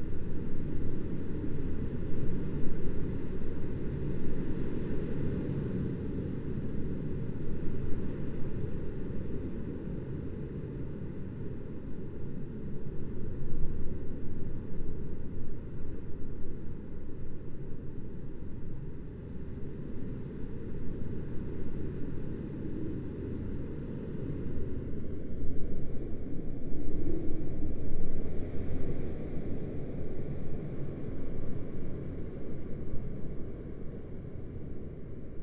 church, migojnice, slovenija - stony water font
Griže, Slovenia, 15 February 2014